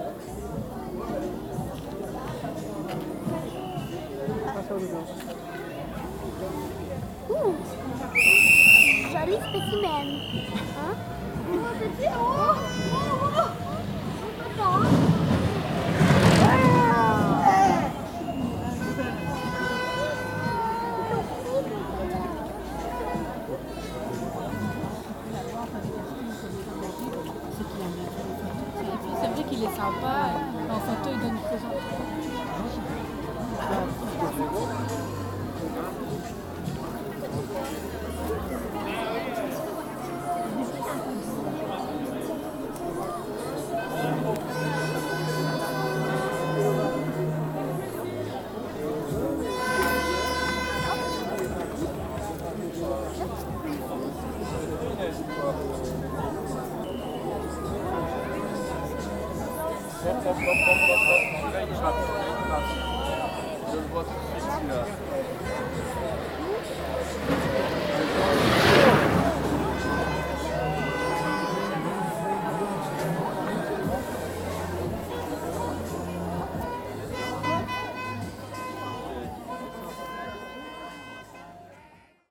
A soapbox race in Mont-St-Guibert. Young children and gravity racer going very fast.